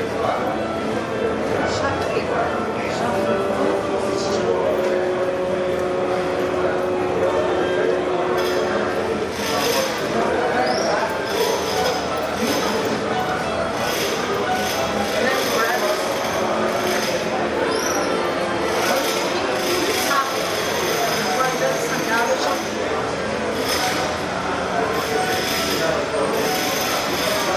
Urca - RJ. - Embaixo da árvore
A espera da aula de apreciação musical na Escola Portátil de Música, UNIRIO.
Waiting music appreciation class at the Escola Portátil de Música, UNIRIO.